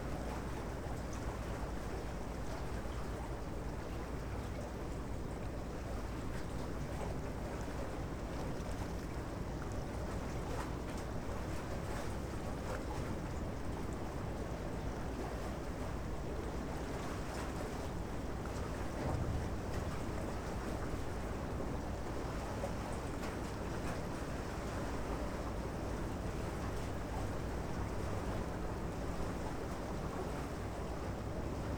Wilhelm-Spiritus-Ufer, Bonn, Deutschland - Modern shipping Rhine
This recording was made on a rowing pier in the Rhine River in Bonn, Germany. A modern cargo ship with its turbine engine passes by.